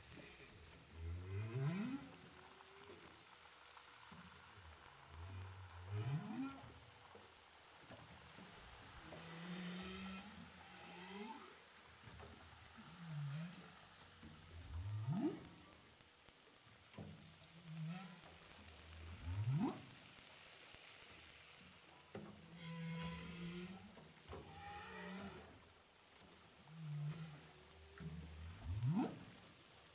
Whales sound by hydrophophone DPA saint paul, ile de la reunion - Whales sound by hydrophophone saint paul, ile de la reunion
Baleines à bosse enregistrées à l'hydrophone DPA au large de saint Paul de la réunion
4 August, ~20:00